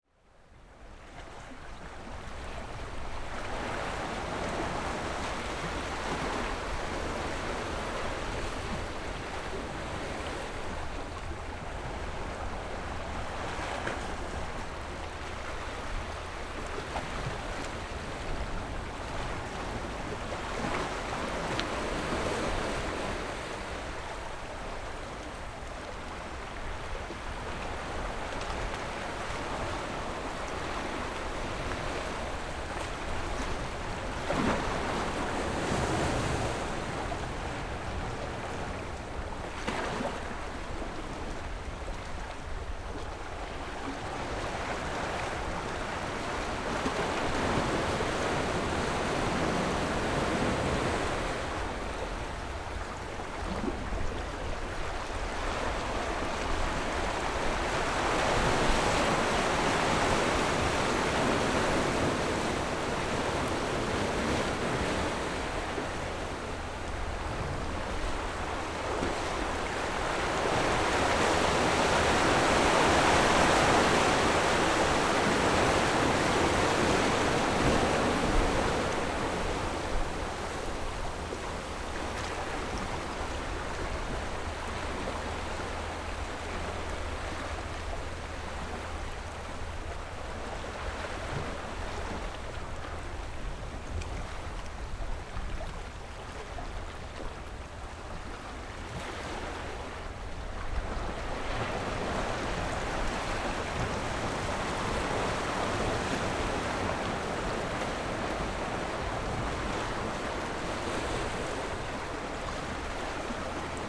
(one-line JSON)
{"title": "Beigan Township, Matsu Islands - the waves", "date": "2014-10-13 14:54:00", "description": "Sound of the waves, Small port, Pat tide dock\nZoom H6 +Rode NT4", "latitude": "26.20", "longitude": "119.97", "altitude": "14", "timezone": "Asia/Taipei"}